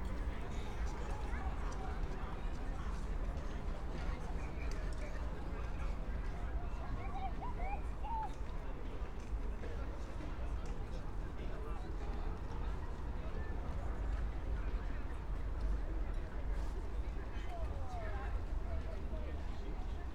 Brno, Lužánky - park ambience
18:31 Brno, Lužánky
(remote microphone: AOM5024/ IQAudio/ RasPi2)
Jihomoravský kraj, Jihovýchod, Česko, August 2021